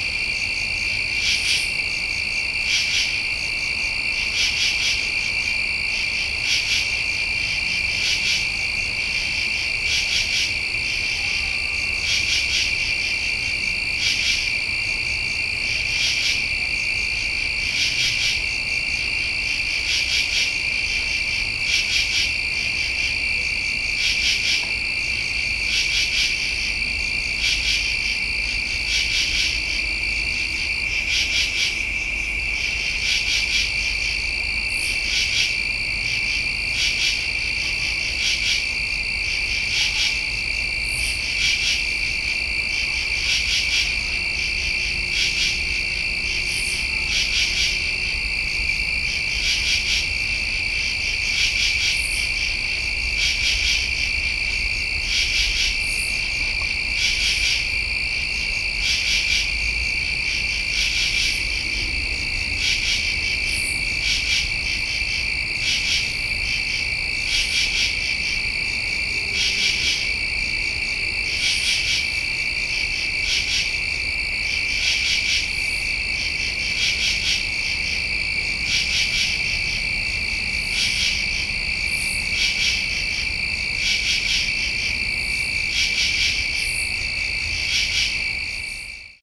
night insects in my mothers yard, abington, ma
insects and a distant train recorded in the side yard of my mothers house in abington, ma, on the south shore outside of boston
Abington, MA, USA, 18 September